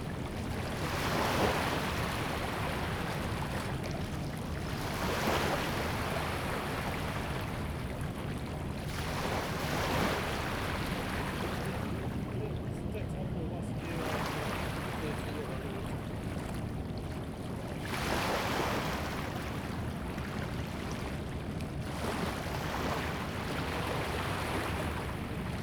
Tamsui River, New Taipei City - high tide
Sound tide, Small pier, Riparian is slowly rising tide, Air conditioning noise
Zoom H2n MS+XY
New Taipei City, Taiwan, 7 April 2016